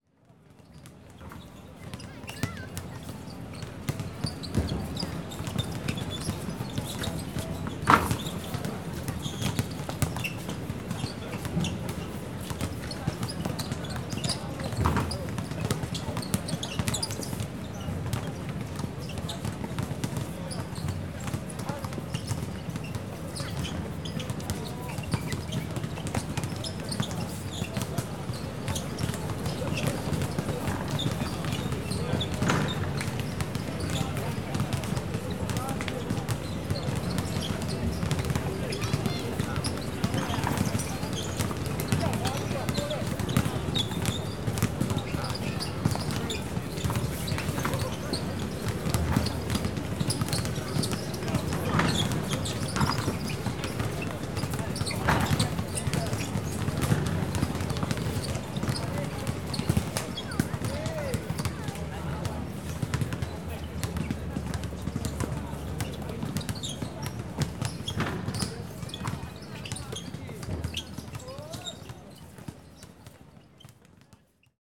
福和運動公園, Yonghe Dist., New Taipei City - Basketball court
Basketball court, Traffic Noise
Zoom H4n
Yonghe District, 福和橋機車專用道, 20 May 2011, 20:57